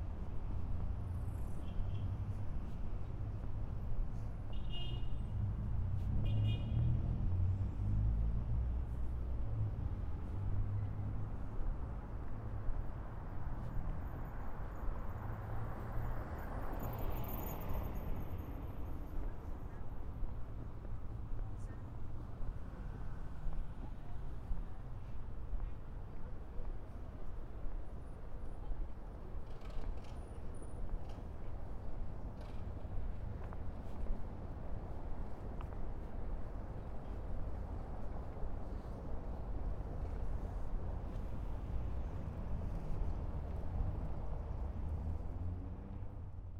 Plaza Humbolt
por Cristian Verna